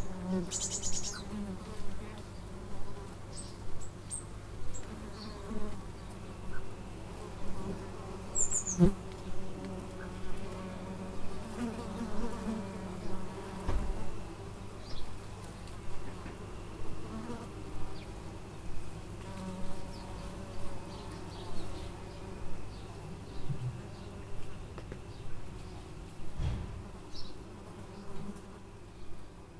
Twistringen, Heinzs yard, bees & wasps
recorder was set under a cherry tree, all kinds of insects were flying around the fruits on the ground